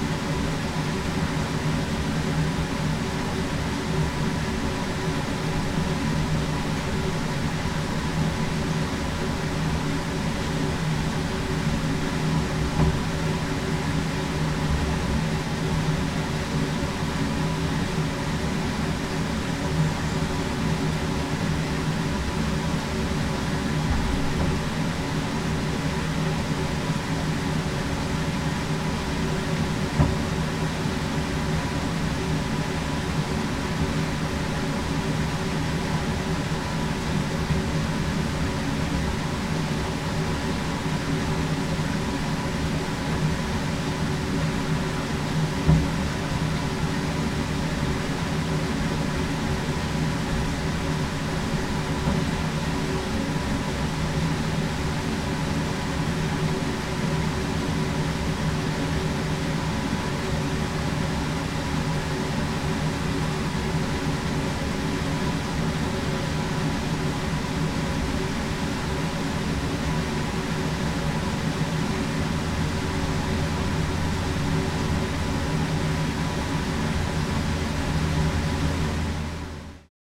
the ahja river resonating inside a wooden grain chute in a ruined mill on the old post road in põlvamaa, estonia. WLD, world listening day